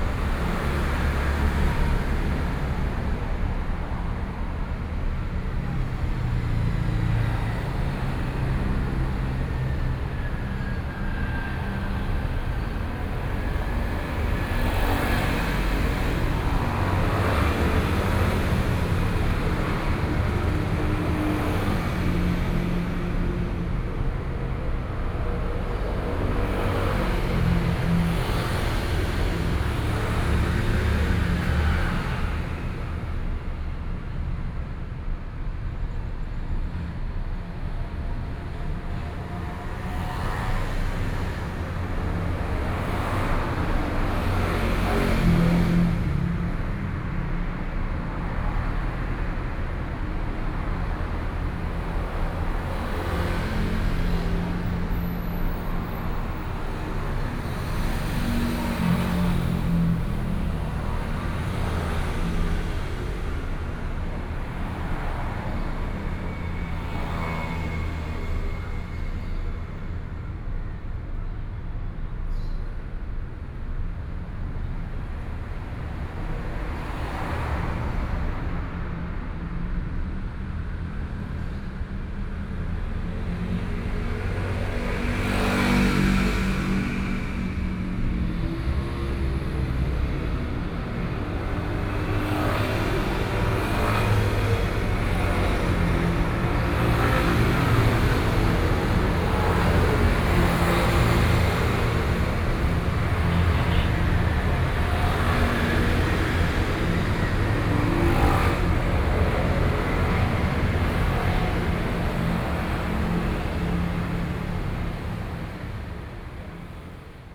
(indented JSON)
{
  "title": "Taoyuan, Taiwan - Traffic noise",
  "date": "2013-09-11 08:05:00",
  "description": "Hours of traffic noise, Sony PCM D50 + Soundman OKM II",
  "latitude": "24.99",
  "longitude": "121.32",
  "altitude": "97",
  "timezone": "Asia/Taipei"
}